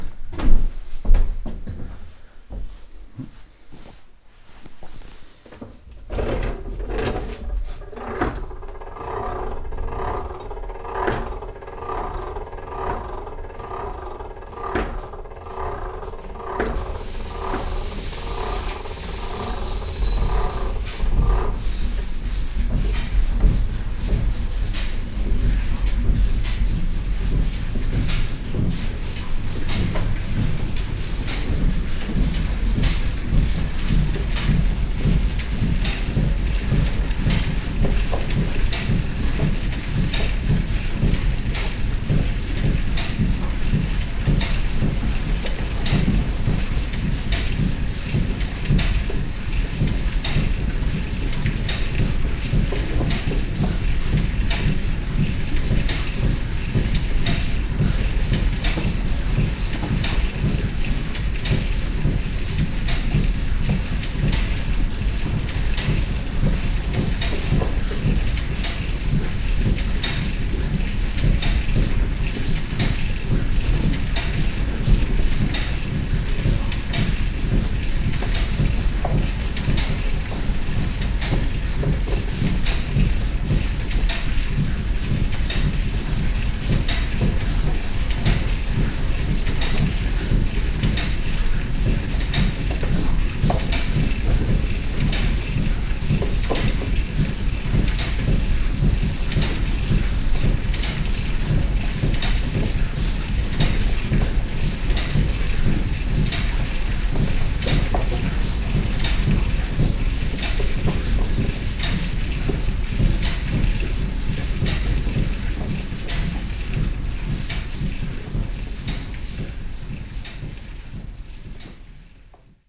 Walking up the wooden staircase to the first floor of the historic mil. The sound of the mechanic that opens the inner water gates to operate the water wheel followed by the sounds of the activated mill mechanic inside the mill.
Enscherange, Rackesmillen, Mühlenmechanik
Das heraufgehen in den ersten Stock dr historischen Mühle auf der hölzernen Treppe. Dann das Geräusch der Mechanik die die inneren Schleusentore zum Antrieb des Mühlenrades öffnet, gefolgt von den Klängen der inneren Mühlenmechaniken.
Les pas dans l’escalier en bois qui mène au premier étage du moulin historique. Le bruit du mécanisme qui ouvre les portes internes pour mettre en marche la roue à aubes suivi du bruit du mécanisme du moulin en action à l’intérieur du moulin.
Enscherange, Luxembourg, 23 September, 20:35